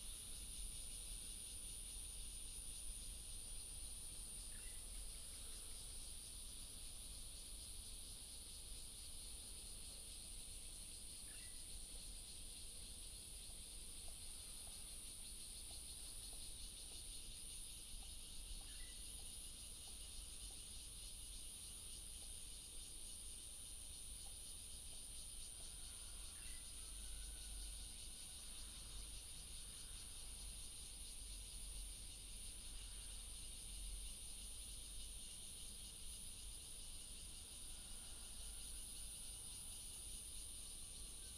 Cicada, traffic sound, birds sound, High - speed railway tunnel, High-speed railway train passing through